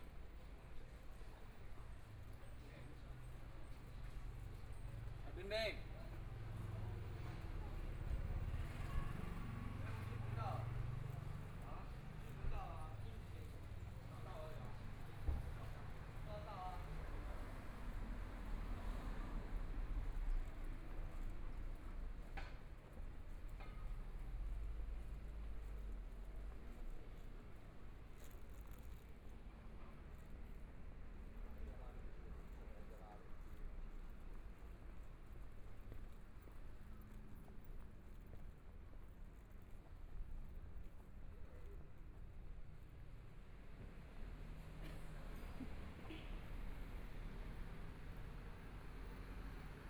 中山區大直里, Taipei City - Quiet neighborhood
Walking through the Street, Sound a variety of shops and restaurants, Traffic Sound
Please turn up the volume a little.
Binaural recordings, Zoom 4n+ Soundman OKM II